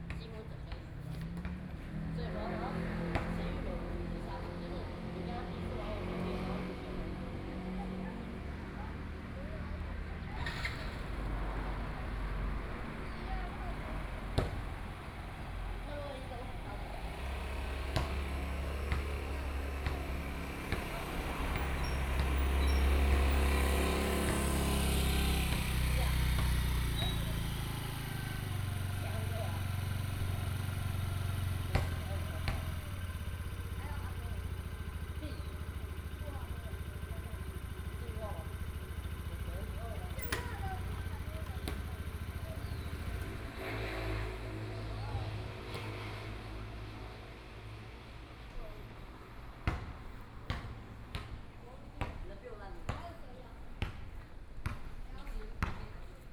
鎮安宮, 頭城鎮竹安里 - In the temple plaza
In the temple plaza, Traffic Sound, Children are playing basketball
Sony PCM D50+ Soundman OKM II